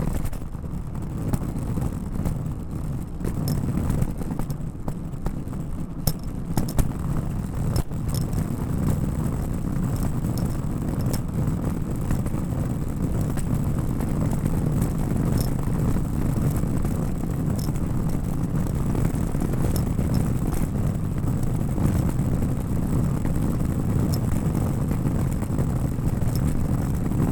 Spring Garden St, Philadelphia, PA, USA - USA Luggage Bag Drag #5
Recorded as part of the 'Put The Needle On The Record' project by Laurence Colbert in 2019.
Philadelphia County, Pennsylvania, United States, 24 September, 13:24